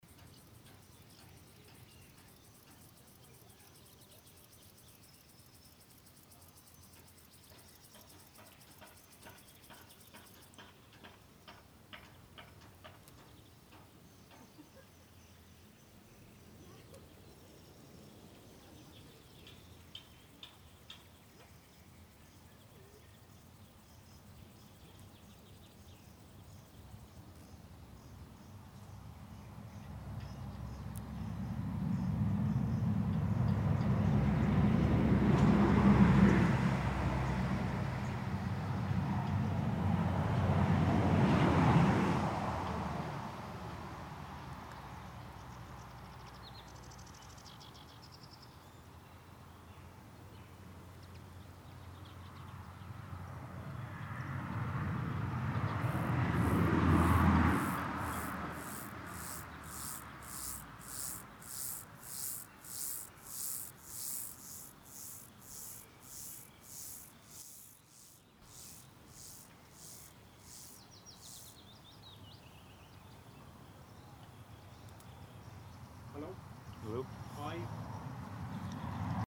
Sounds near seaside, Kabli, Estonia
grasshoppers, passing cars, german couple